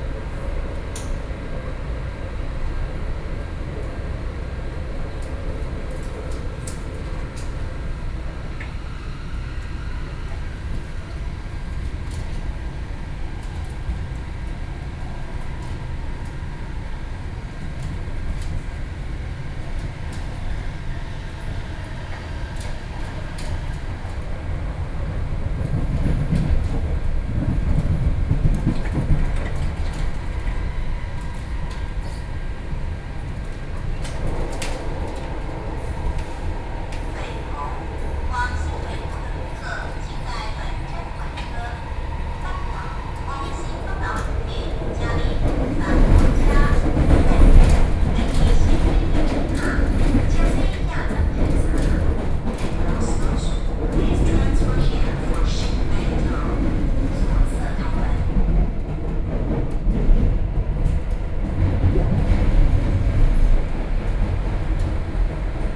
MRT station platforms, Wait for the first train, Sony PCM D50 + Soundman OKM II